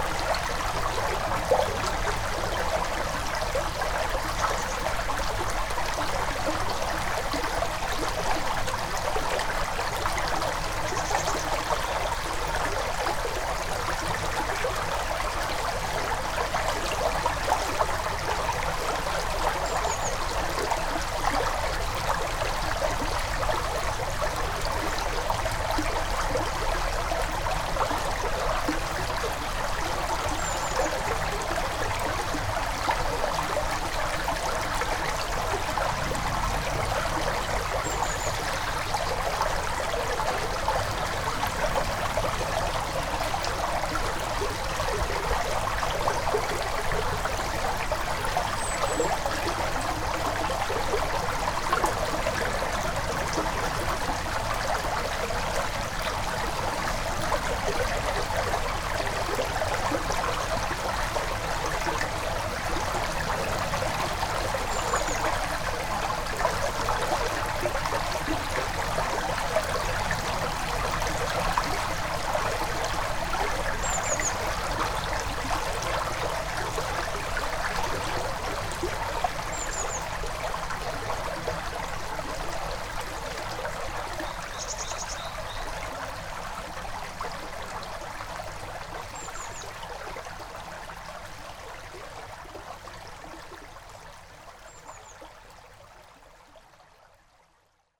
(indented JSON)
{
  "title": "Genappe, Belgique - Ry d'Hez river",
  "date": "2017-04-09 16:40:00",
  "description": "The bucolic Ry d'Hez river, flowing quietly and recorded from a small pedestrian bridge.",
  "latitude": "50.60",
  "longitude": "4.50",
  "altitude": "108",
  "timezone": "Europe/Brussels"
}